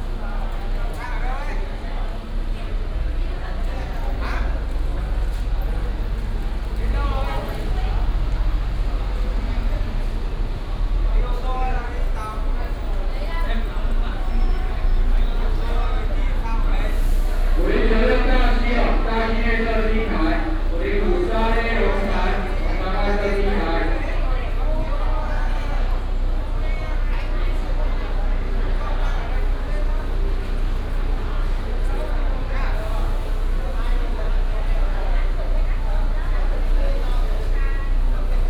桃園客運總站, Taoyuan City - In the passenger station hall
In the passenger station hall, At the passenger terminal
Binaural recordings, Sony PCM D100+ Soundman OKM II